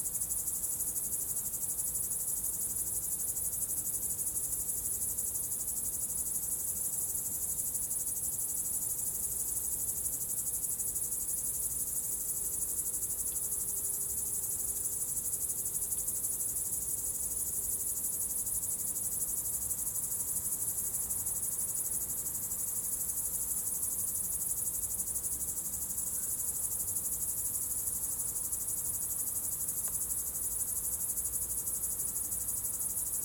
Solesmeser Str., Bad Berka, Deutschland - Binaural Sounds of Summer Nights Bad Berka
*Binaural - best listening with headphones.
This recording chronicles sounds of nature typical of summer nights juxtaposed against anthrophony. Sounds in the left and right channels exhibit acoustic energies and rhythmical textures.
In the sound: Helicopter engine, Cricket, soft winds, soft car engines in the background.
Gear: Soundman OKM with XLR and Adapter, ZOOM F4 Field Recorder.